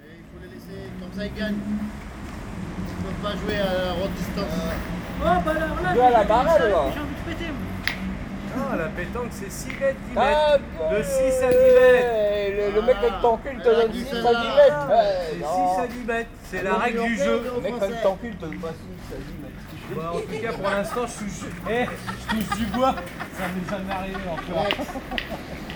{
  "title": "Tours, France - Bowling in a park",
  "date": "2017-08-13 16:40:00",
  "description": "On a small park, a very noisy group plays bowling. To say the least, they are very happy, it's a communicative way of life ! It looks like this group of friends play every sunday like that.",
  "latitude": "47.39",
  "longitude": "0.67",
  "altitude": "52",
  "timezone": "Europe/Paris"
}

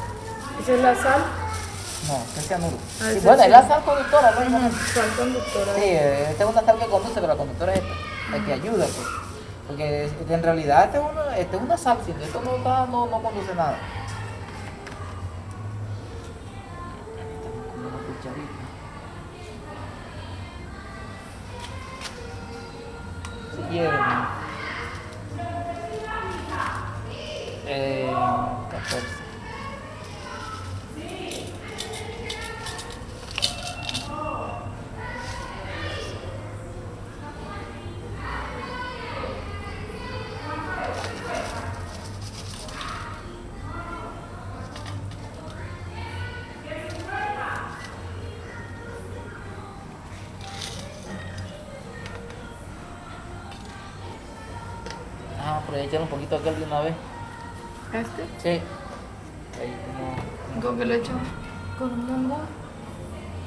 {
  "title": "Taller Eligio Rojas, Mompós, Bolívar, Colombia - Taller de orfebre de Eligio",
  "date": "2022-04-30 19:05:00",
  "description": "El maestro artesano Eligio Rojas hace una baño en oro de unas piezas en plata",
  "latitude": "9.25",
  "longitude": "-74.43",
  "altitude": "16",
  "timezone": "America/Bogota"
}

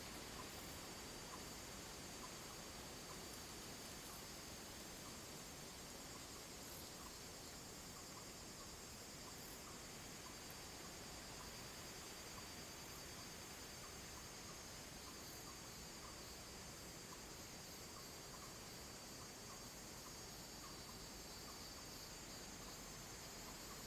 {
  "title": "Gaoraoping, Fuxing Dist., Taoyuan City - Mountain road",
  "date": "2017-08-14 15:39:00",
  "description": "Mountain road, The sound of birds, Traffic sound, Zoom H2n MS+XY",
  "latitude": "24.80",
  "longitude": "121.30",
  "altitude": "335",
  "timezone": "Asia/Taipei"
}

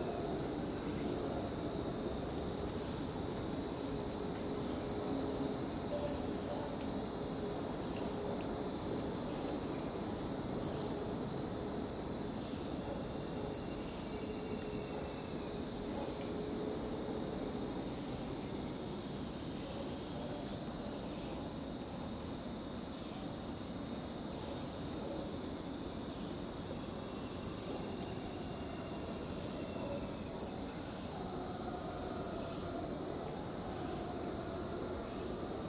{
  "title": "Vila Santos, São Paulo - State of São Paulo, Brazil - Howler Monkeys and Cicadas - ii",
  "date": "2016-12-02 13:41:00",
  "latitude": "-23.45",
  "longitude": "-46.64",
  "altitude": "844",
  "timezone": "GMT+1"
}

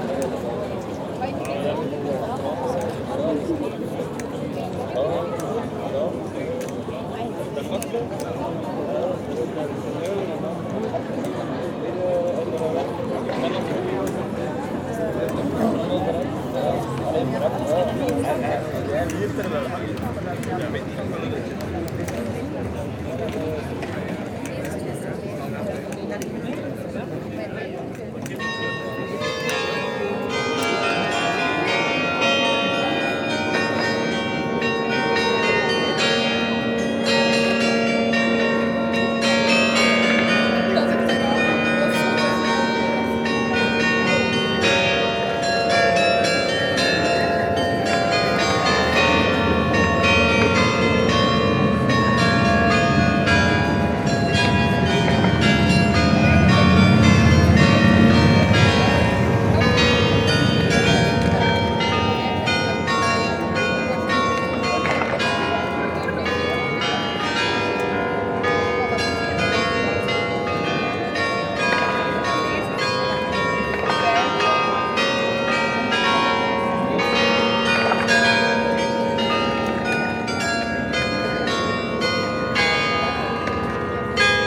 {
  "title": "Dendermonde, België - Dendermonde carillon",
  "date": "2019-02-23 15:30:00",
  "description": "On the main square of the Dendermonde city, people drinking on the shiny bar terraces and at the end, the beautiful carillon ringing.",
  "latitude": "51.03",
  "longitude": "4.10",
  "altitude": "3",
  "timezone": "GMT+1"
}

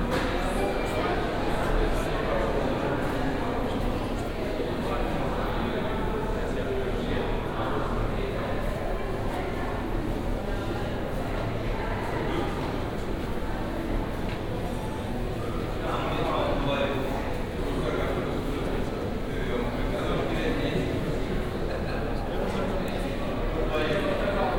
{
  "title": "alexanderplatz, tv tower, foyer",
  "description": "tv tower, entrance area ambience\n14.06.2008, 18:30",
  "latitude": "52.52",
  "longitude": "13.41",
  "altitude": "40",
  "timezone": "GMT+1"
}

